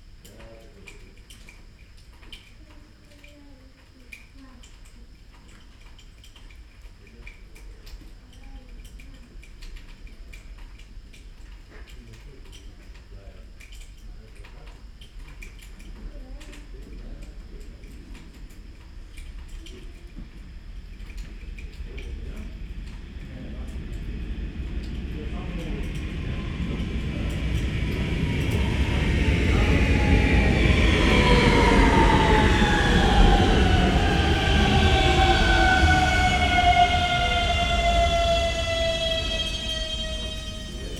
Electric passenger train, LPV 1804 from Ljubljana, SI to Opcine, IT at 10:01. Sežana train station.
Recorded with ZOOM H5 and LOM Uši Pro, Olson Wing array. Best with headphones.
Upravna enota Sežana, Slovenija